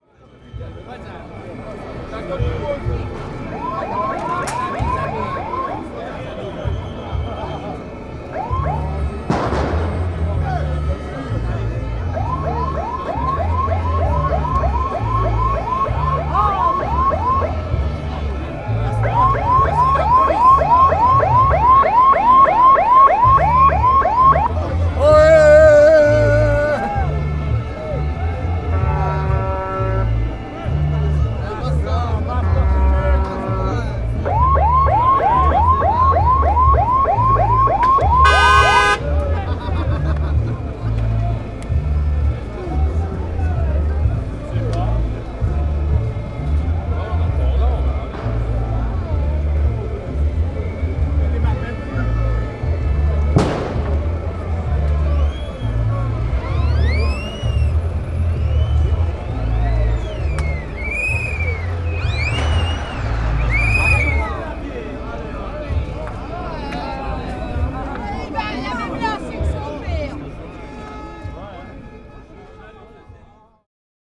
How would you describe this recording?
Brussels, Rue de la Loi, Ambiance during the demonstration. Bruxelles, Rue de la Loi pendant une manifestation.